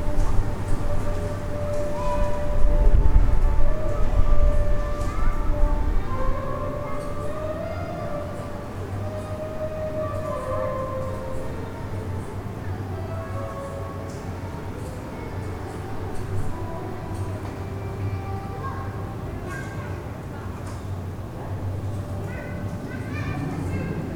Rotterdam, Schiekade
recording from my balcony. with somebody playing soprano saxophone and distant sounds from the annual rotterdam city race.